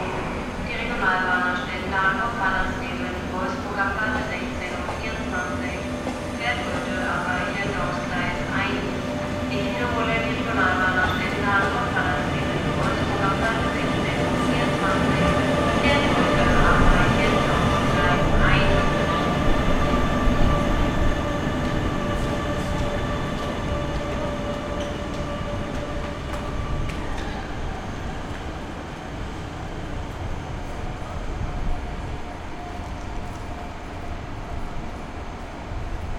Braunschweig Hauptbahnhof, Bahnsteig, rec 2004
Brunswick, Germany